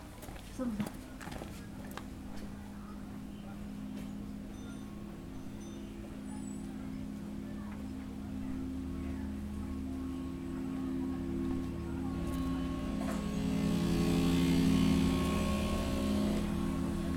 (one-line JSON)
{"title": "Yannian Hutong, Shi Cha Hai, Xicheng Qu, Beijing Shi, China - Mid afternoon in Yannian hutong", "date": "2019-10-01 15:20:00", "description": "Recorded with a Zoom H4N while sitting in Yannian hutong, on the national day of 2019, while most of the center of Beijing was locked down.", "latitude": "39.94", "longitude": "116.38", "altitude": "56", "timezone": "Asia/Shanghai"}